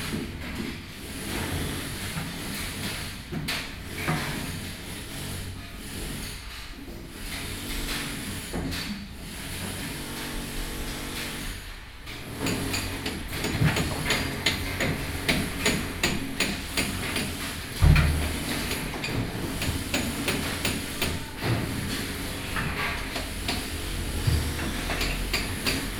Beitou, Taipei - Being renovated house
Being renovated house, Binaural recordings+Zoom H4n +Contact Mic.